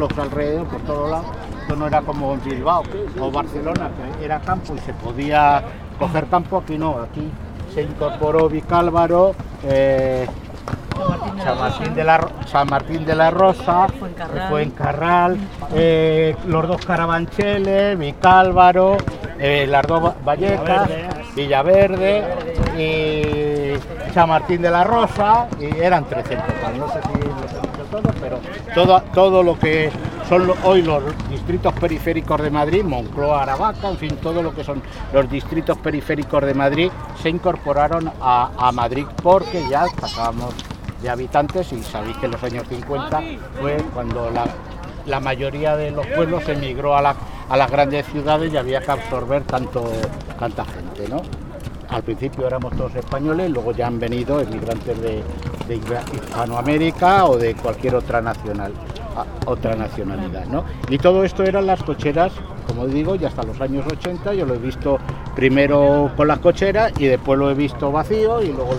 Pacífico, Madrid, Madrid, Spain - Pacífico Puente Abierto - Transecto - 11 - Calle Cocheras. La importancia de las canchas de baloncesto
Pacífico Puente Abierto - Transecto - 11 - Calle Cocheras. La importancia de las canchas de baloncesto